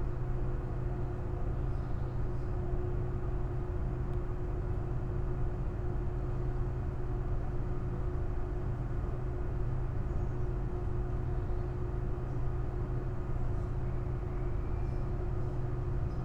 Krematorium Baumschulenweg, Berlin - machine drone from inside
Berlin, cemetery / crematorium Baumschulenweg, drone heard at the basement, at a metal door, coming from inside
(Sony PCM D50, Primo EM172)
Deutschland, 29 August 2020, ~12:00